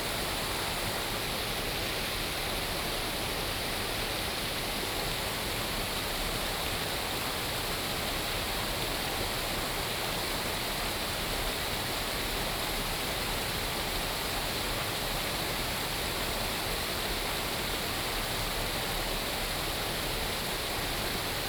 Zhonggua River, Puli Township 桃米里 - Stream
Stream sound
Binaural recordings
Sony PCM D100+ Soundman OKM II
Puli Township, Nantou County, Taiwan, April 2016